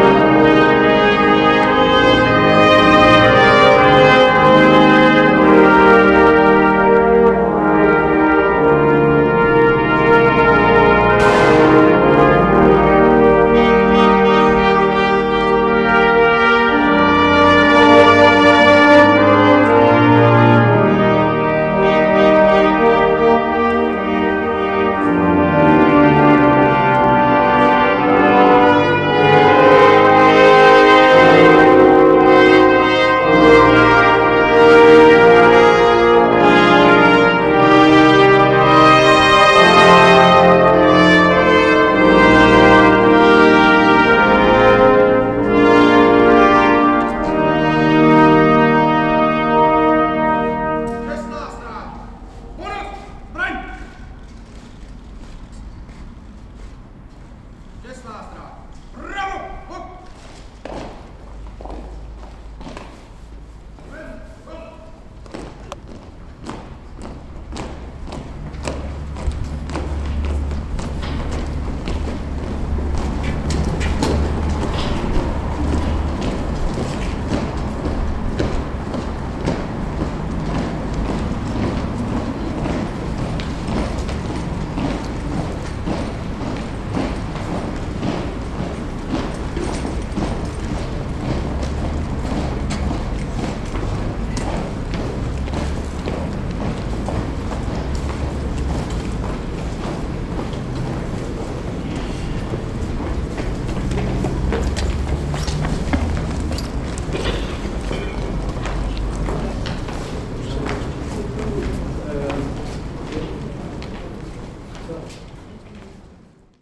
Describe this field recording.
Funeral Celebration of Ladislav Novak, famous stopper of Dukla Praha FC who was big star in 50ies and 60ies and in the silver team at the world cap in Chili.